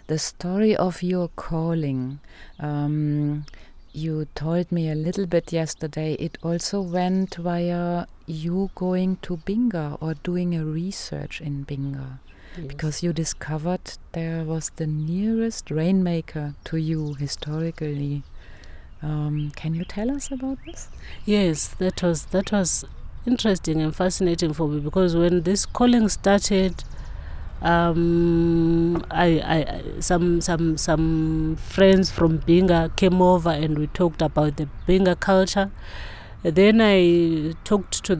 ...i asked Gogo in particular to tell us about her recent research in Binga that she had mentioned to the night before.... Thembi discovered that the geographically and historically closest rain-maker to her area in Lupane had lived in Binga... she went for a two-weeks research to Binga, and got to know the story of Maalila. He used to perform rain-making rituals at Binga’s hot springs until the Zimbabwe government and National Parks claimed the land as private property. Based on Gogo’s research, a thirteen-episode TV series about Maalila was developed and produced in Binga...
Thembi Ngwabi now better known as Gogo (Ugogo means granny in Ndebele) describes her transformation from a young creative woman grown up in town (Bulawayo), a dancer, bass-guitarist, director of plays and films and of the Amakhosi Performing Arts Academy to a rapidly-aged, traditional rain-dancer in the Lupane bushland…